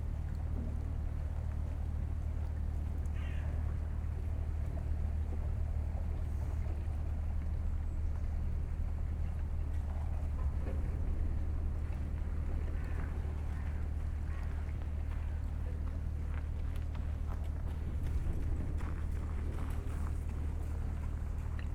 berlin, plänterwald: spreeufer - coal freighter breaking ice
Berlin Plänterwald, cold winter Sunday afternoon. a coal freighter on its way to the nearby heating plant breaks the ice on river spree, then continues the transport.
(Sony PCM D50, DPA4060)
Berlin, Germany, 26 January 2014, 16:30